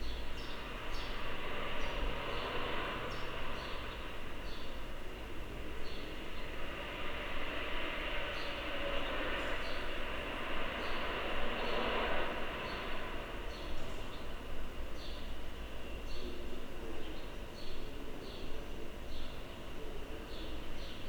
corridors, mladinska - intercom, swifts, street voices
Slovenija, 11 June 2014, ~09:00